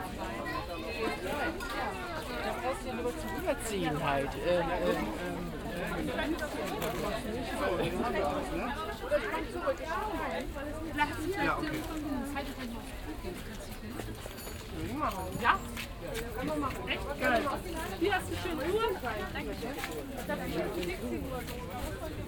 sunday afternoon, walk along the so called flowmarkt, a recently established second hand market. significant for the ongoing change of this quarter.
Berlin, Germany, 15 August 2010